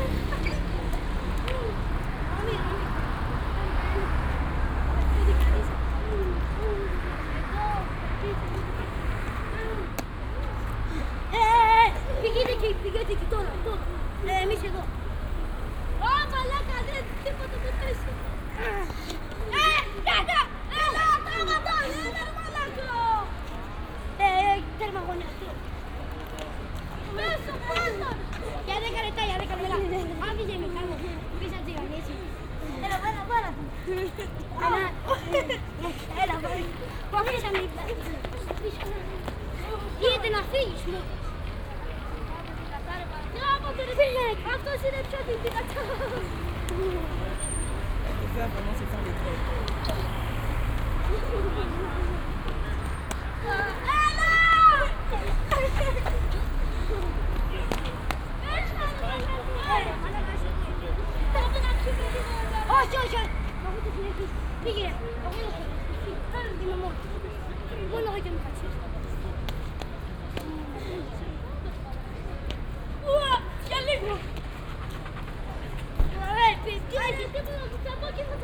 Athen, Victoria - kungfu kids fighting, square ambience
evening at Victoria square, four kids playing martial arts fights and beat each other quite hard. waves of traffic, a tiny cyclist demonstration passing by.
(Sony PCM D50, OKM2)